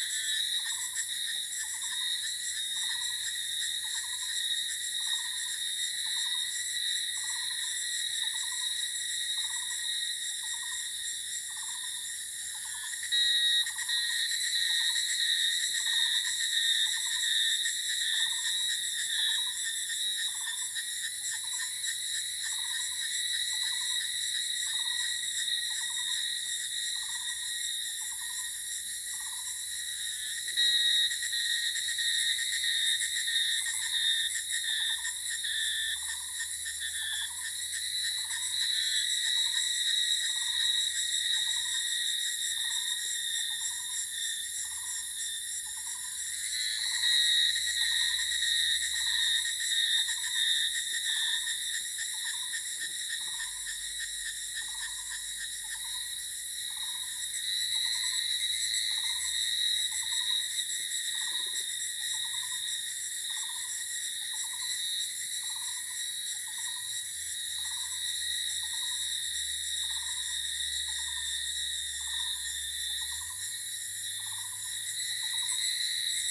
In the woods, Bird sounds, Cicada sounds
Zoom H2n MS+XY